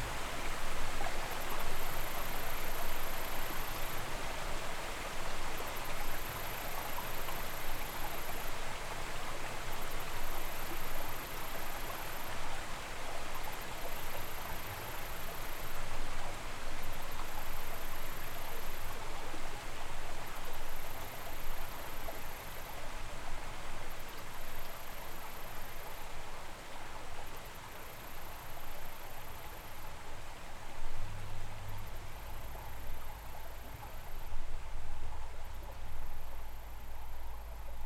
Crystal Bridges Museum of American Art, Bentonville, Arkansas, USA - Crystal Bridges Bridge
Ambient and geophone recording from a bridge on the grounds of the Crystal Bridges Museum of American Art. Ambient recording fades out to reveal geophone recording from bridge over a creek that cascades out from under one of the museum buildings.
Arkansas, United States